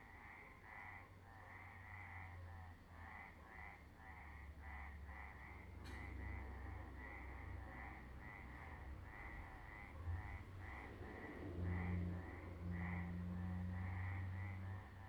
El Risco, Agaete, Las Palmas, Spain - frogs at night
evening frogs before the storm